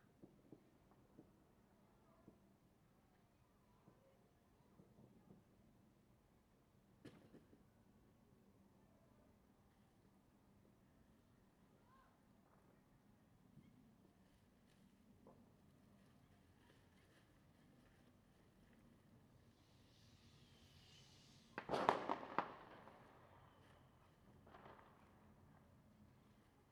Firecrackers, Small village, Traditional New Year
Zoom H2n MS +XY
9 February 2016, 19:38